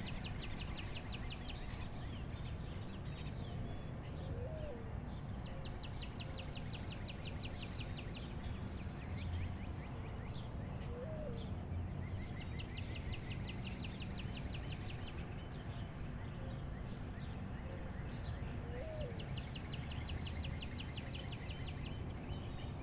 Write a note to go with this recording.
Early morning birdsong, Riverside Fitness Park, Bluffton, IN